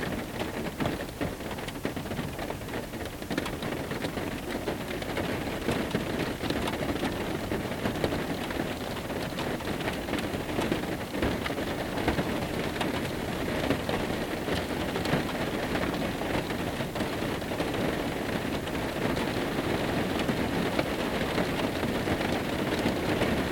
Hooker Valley Road, Aoraki Mount Cook National Park, New Zealand - Rain at night inside a van at White Horse Hill Campsite
Night recording of rain, inside a van at campsite nearby Mount Cook.
ZoomH4 in stereo.
Canterbury, New Zealand / Aotearoa, 2 June 2021